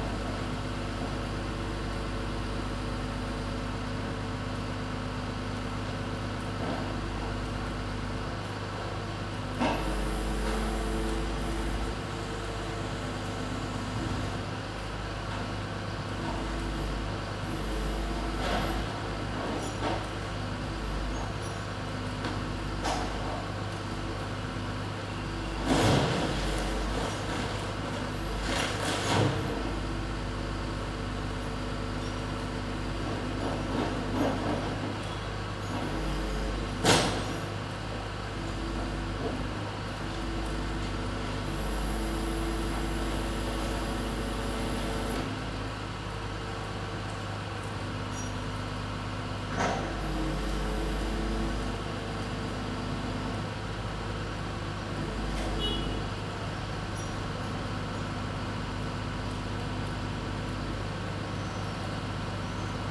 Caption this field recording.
Recording made from a window during the coiv-19 lockdown. It's raining and you can also hear several sounds from the city streets. Recorded using a Zoom H2n.